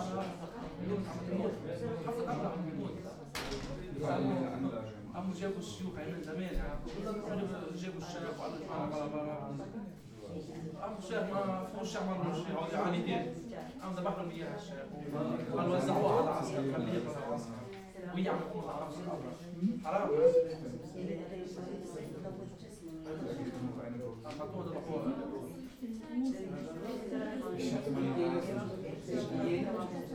berlin, urban hospital - waiting room
urban hospital, emergency unit, waiting room
Berlin, Germany, January 2010